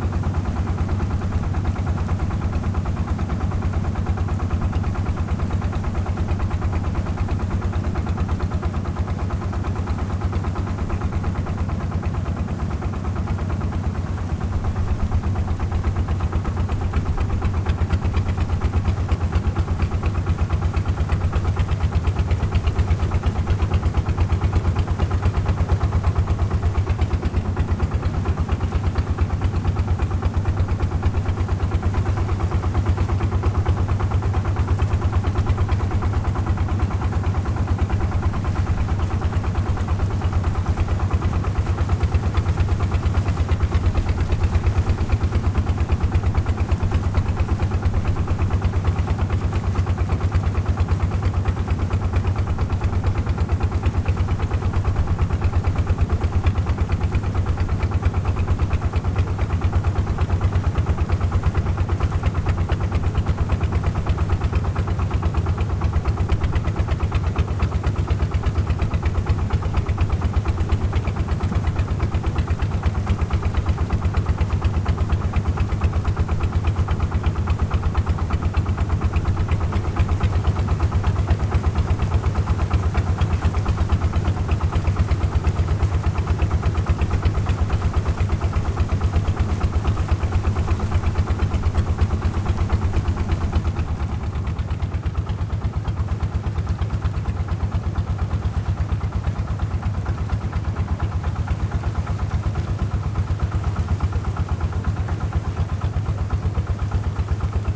Fangyuan Township, Changhua County - Small truck traveling on the sea
Small truck traveling on the sea, Zoom H6